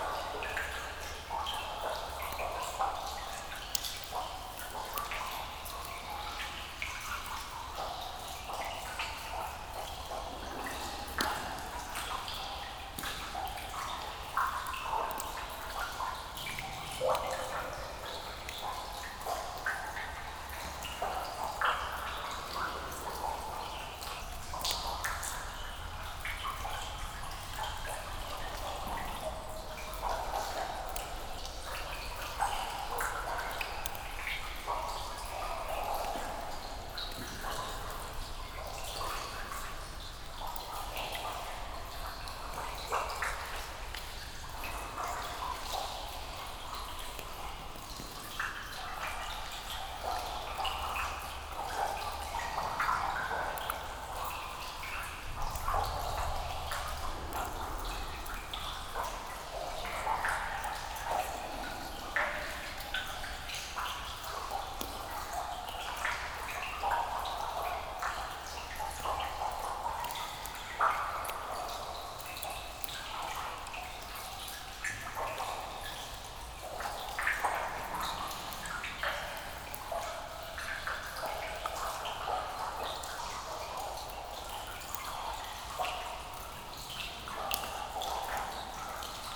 Chassal, France - Chassal underground quarry
In this village of the Jura area, there's a small marble underground quarry. The square room includes a lake. This is here the pleasant sound of drops falling into the lake, some drops falling directly on the microphones, and also a few sounds from the outside as the room is not very huge.